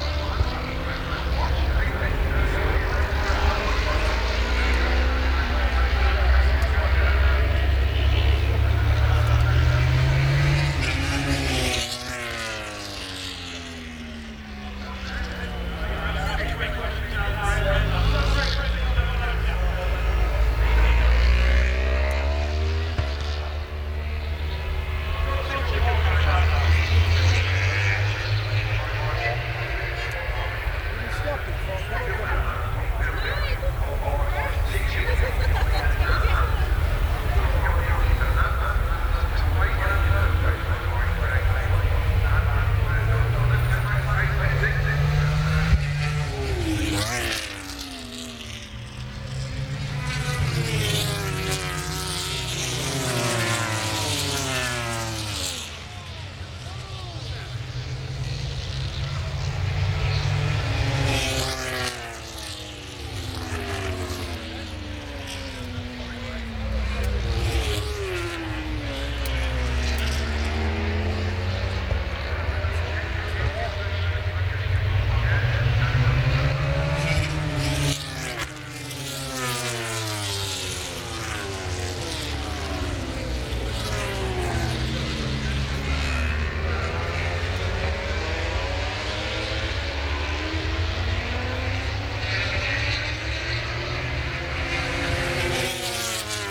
Lillingstone Dayrell with Luffield Abbey, UK - british motorcycle grand prix 2013 ...
motogp warmup ... lavalier mics ...
Towcester, UK